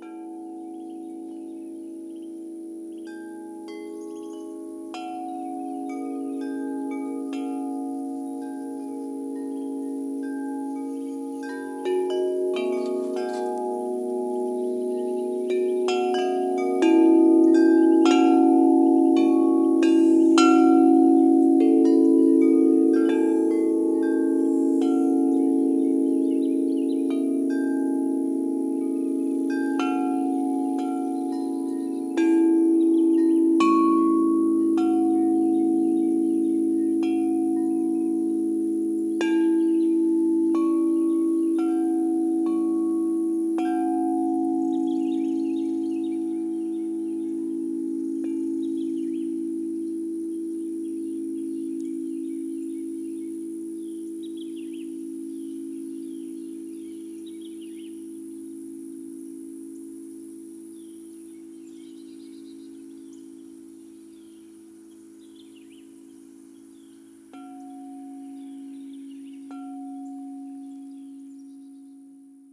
Recorded with a Marantz PMD661 and a pair of DPA 4060s